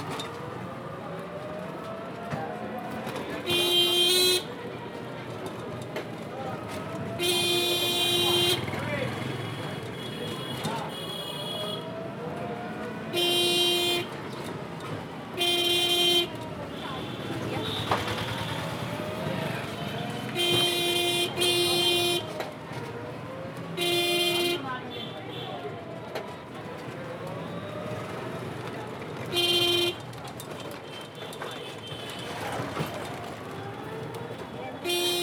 Police Colony, Sector, Rama Krishna Puram, New Delhi, Delhi, India - 05 Horny TukTuk
Recording from inside a TukTuk - regular ride on a busy street.
Zoom H2n + Soundman OKM